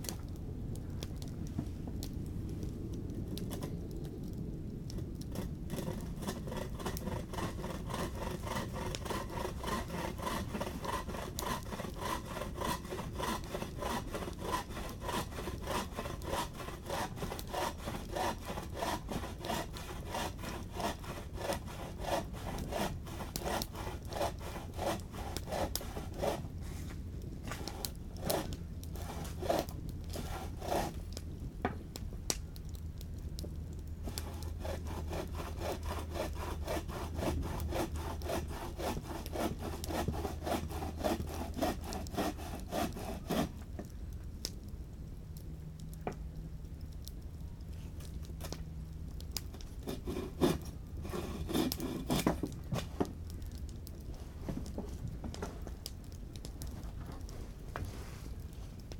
Sony PCM-D50, (pseudo) ORTF
Preparing the fireplace, listen the crackly fire